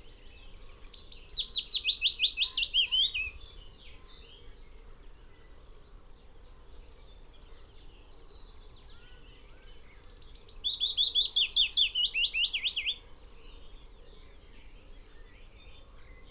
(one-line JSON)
{"title": "Green Ln, Malton, UK - willow warbler soundscape ...", "date": "2022-05-08 05:40:00", "description": "willow warbler soundscape ... xlr sass on tripod to zoom h5 ... bird song ... calls ... from ... blackcap ... yellowhammer ... skylark ... blackbird ... goldfinch ... pheasant ... red-legged partridge ... wren, ... crow ... chaffinch ... dunnock ... whitethroat ... blue tit ... wood pigeon ... linnet ... unattended time edited ... extended recording ...", "latitude": "54.12", "longitude": "-0.57", "altitude": "97", "timezone": "Europe/London"}